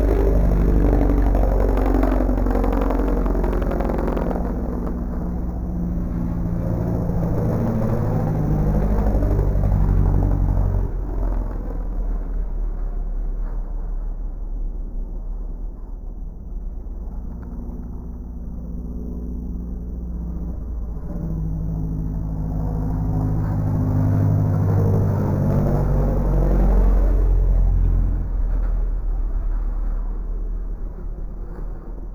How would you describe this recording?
British Motorcycle Grand Prix ... 600cc second practice ... recorder has options to scrub the speed of the track ... these are the bikes at 1/8 x ...